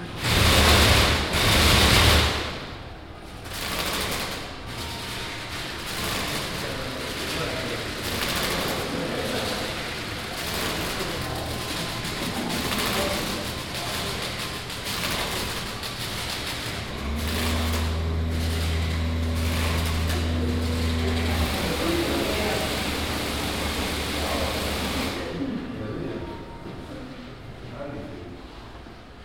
Düsseldorf, Ehrenhof, Museum Kunstpalast, media exhibition - düsseldorf, ehrenhof, media exhibition
media exhibition - paik award 2010 within the nam june paik exhibition - here sounds of a plastic bag motor installation
soundmap d - social ambiences, art spaces and topographic field recordings